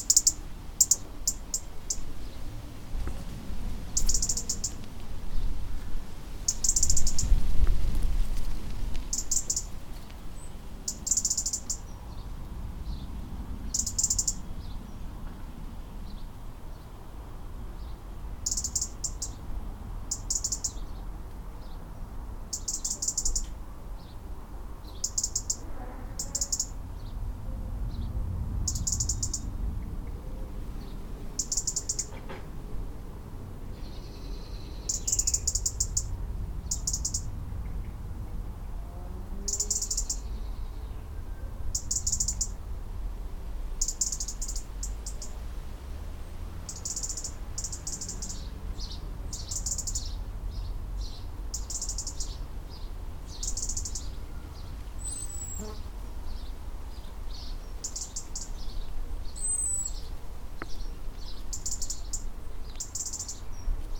A walnut tree, Katesgrove, Reading, Reading, UK - The angry Robin
This is the alarm call of an angry robin in the area. He is very angry because our cat, Joey, caught his chicks earlier this spring. Joey has very little skill as a hunter but this Robin and its partner built their nest in a highly accessible place in the hedge, very low to the ground. It is not easy to be both a bird-lover and a cat-lover, and we have been reminded of this all summer long, because whenever the cat is in the garden, the Robin produces these urgent alarm-calls. On behalf of our cat we really apologise to the Robins.